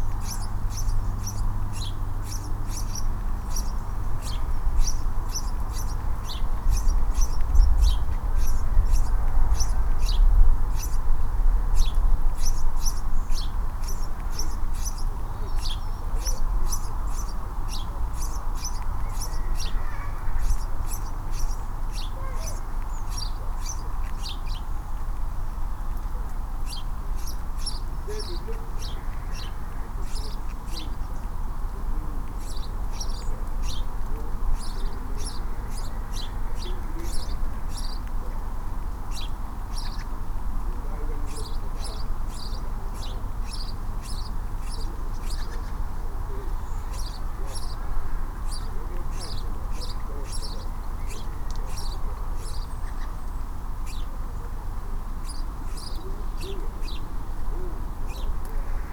Plymouth, UK - Sparrows, Kinterbury Creek
7 December 2013